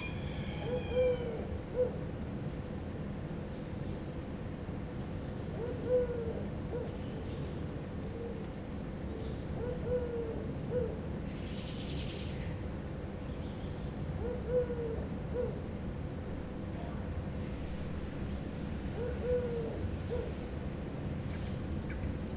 Corniglia, hostel - wake up call
morning quietness in the village torn by the rooster call. pigeons hooting in the background. sooner or later the freezer units of a nearby shop had to kick in.